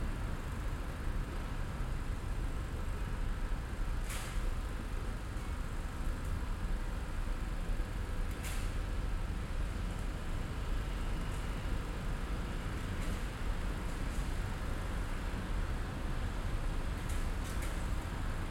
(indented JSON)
{
  "title": "Traffic and repairs in the pavement",
  "latitude": "41.39",
  "longitude": "2.15",
  "altitude": "71",
  "timezone": "Europe/Madrid"
}